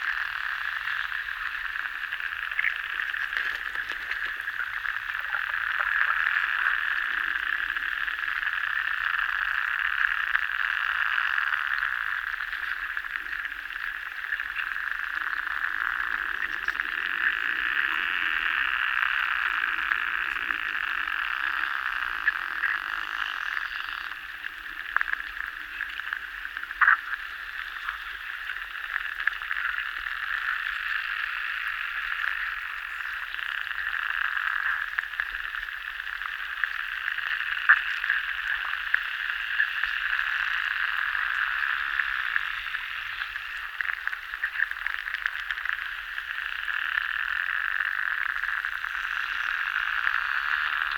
Anykščiai, Lithuania, river Sventoji through hydrophone
River Sventoji - underwater listening with hydrophone.